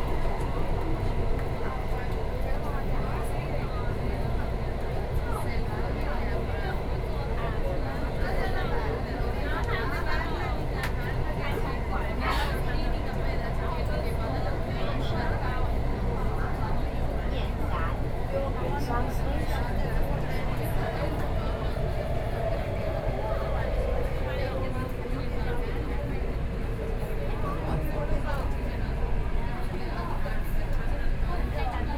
Taipei, Taiwan - Crying child
Crying child, Inside the MRT train, Sony PCM D50 + Soundman OKM II